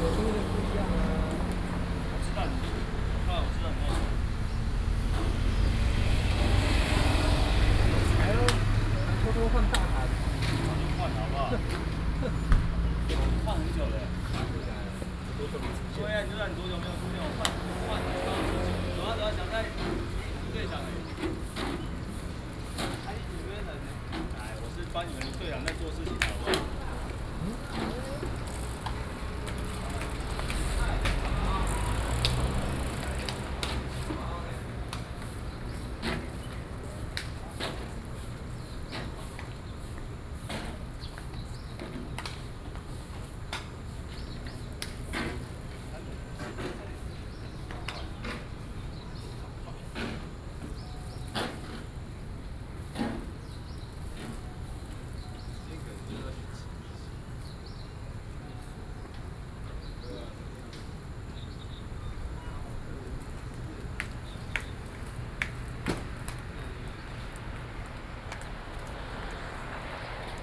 In front of the entrance convenience stores, Sony PCM D50